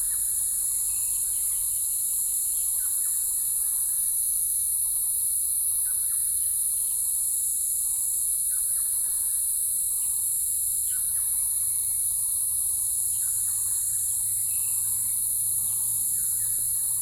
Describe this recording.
Frog calls, Birds singing, Insect sounds, Binaural recordings, Sony PCM D50 + Soundman OKM II